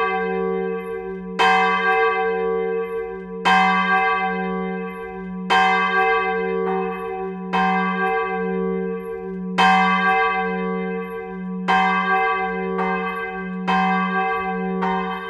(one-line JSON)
{"title": "l'Église, Pl. de l'Église, Thérouanne, France - église de Therouanne (Pas-de-Calais) - clocher", "date": "2022-03-21 15:00:00", "description": "église de Therouanne (Pas-de-Calais) - clocher\n3 cloches - volées et tintements\ncloche 1 - la plus grave - volée automatisée", "latitude": "50.64", "longitude": "2.26", "altitude": "36", "timezone": "Europe/Paris"}